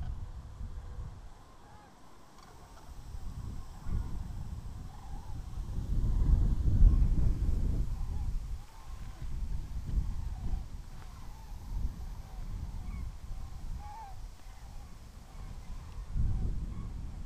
Sounds of cranes departing for their breakfast.